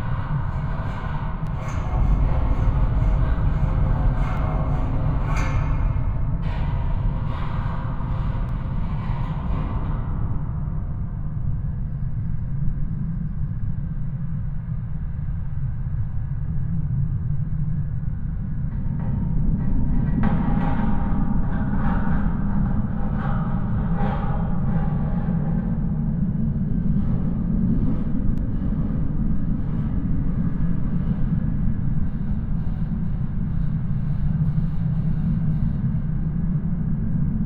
Gdańsk, Polska - ikm piknik
Nagrania dokonano podczas Pikniku realizowanego przez Instytut Kultury Miejskiej. Do nagrania wykorzystano mikrofony kontaktowe.